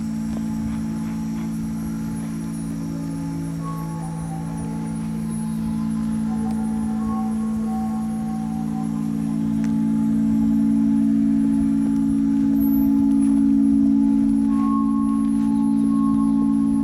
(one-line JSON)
{"title": "water tank, near Parque Cultural de Valparaíso, Chile - sonic activation of a water tank", "date": "2014-12-04 14:09:00", "description": "sonic activation of responding frequencies and resonances in an old water tank during a workshop at Tsonami Festival 2014", "latitude": "-33.05", "longitude": "-71.63", "altitude": "51", "timezone": "America/Santiago"}